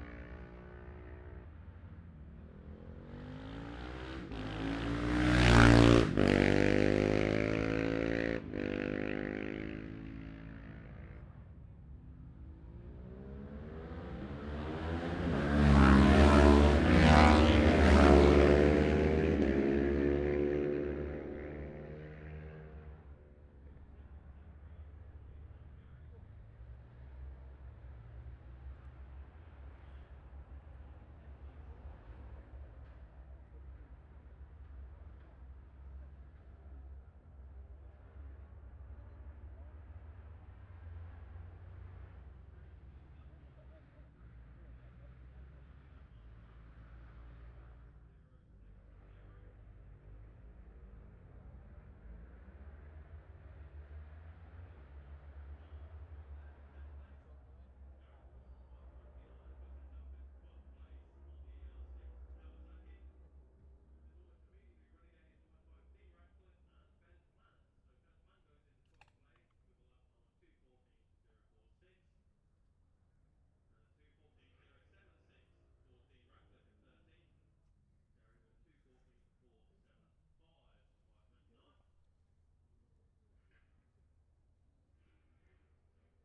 bob smith spring cup ... twins group B qualifying ... luhd pm-01 mics to zoom h5 ...
Scarborough, UK